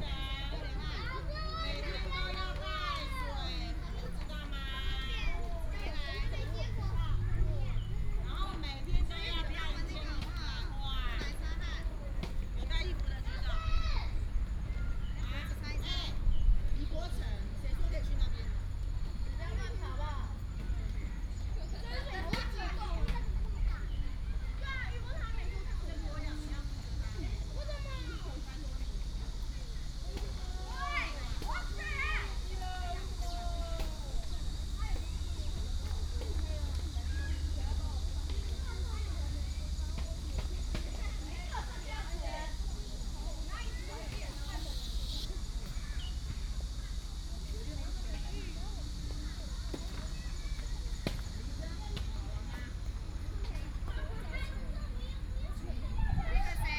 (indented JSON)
{
  "title": "頭城鎮運動公園, Yilan County - Child",
  "date": "2014-07-07 15:37:00",
  "description": "In Sports Park, Birdsong, Very hot weather",
  "latitude": "24.86",
  "longitude": "121.82",
  "altitude": "9",
  "timezone": "Asia/Taipei"
}